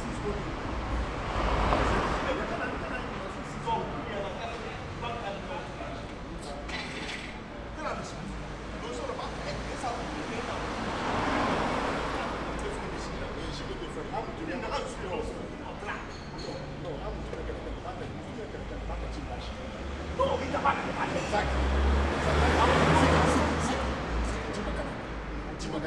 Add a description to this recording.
in front of spanish restaurant "maria mulata", World Listening Day, WLD, the city, the country & me: july 18, 2010